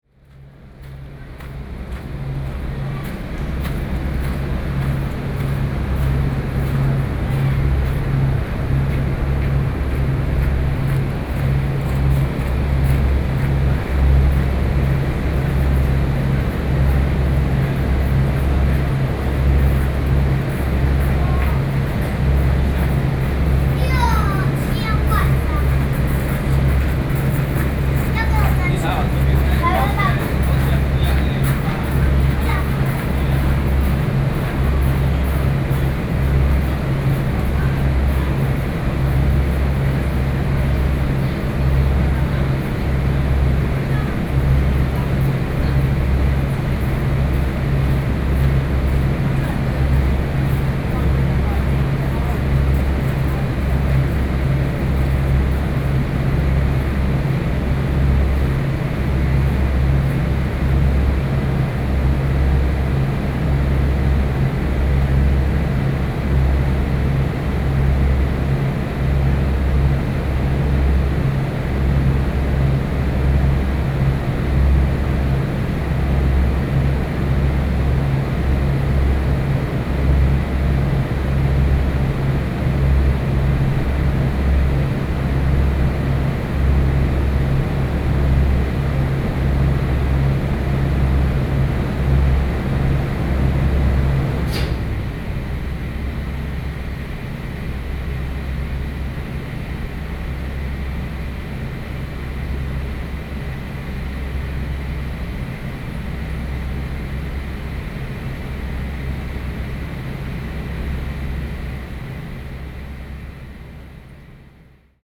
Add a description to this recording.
Standing outdoors in a building next to the noise of the air conditioner, Sony PCM D50 + Soundman OKM II